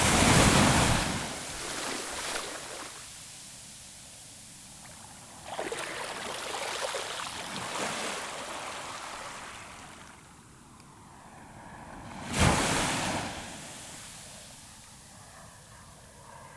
La Faute-sur-Mer, France - The sea during high tide
Recording of the sea during high tide. As the beach is wide, the waves are big and strong.